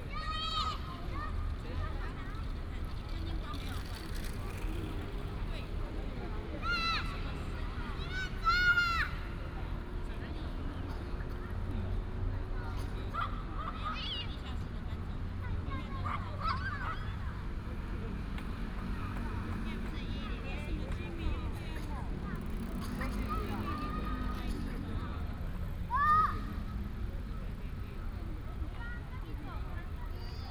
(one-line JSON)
{"title": "Tamsui Civil Sport Center, New Taipei City - In the Plaza", "date": "2017-05-03 18:20:00", "description": "In the Plaza, Traffic sound, Children", "latitude": "25.19", "longitude": "121.44", "altitude": "33", "timezone": "Asia/Taipei"}